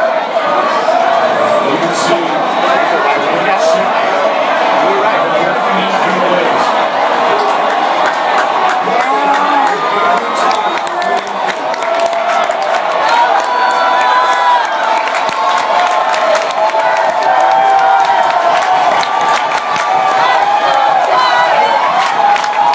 Tempest Bar "SF" - Giants Win Pennant!!
fun crowd, raining, hot inside, iphone